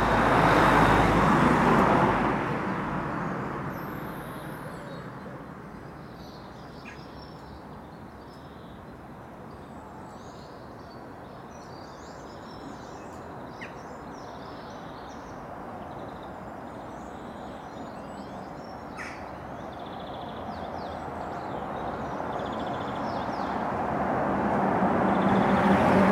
Contención Island Day 73 inner west - Walking to the sounds of Contención Island Day 73 Thursday March 18th
The Poplars High Street Elmfield Road
Under the eaves
the tit goes into its nest
traffic’s passing press
Electric gates and entry systems
from behind a wall
the smell of death
A runner
irritated at the van
parked across the pavement
England, United Kingdom, 18 March 2021, ~09:00